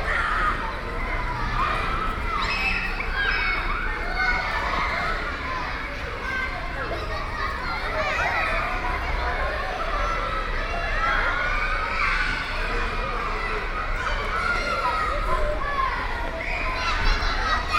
Children playing in the schoolyard.